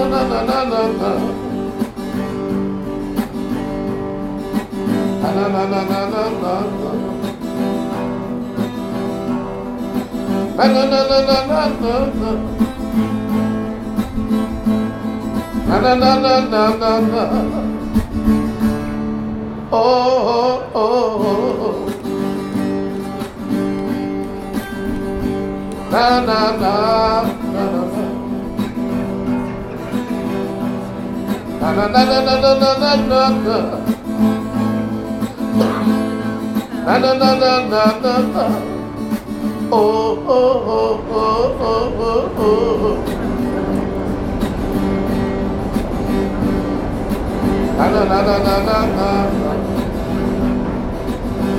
Prague, Czech Republic - the gipsy on stairs to heaven
older man playing on guitar and sing
Praha, Czech Republic, 1 June 2015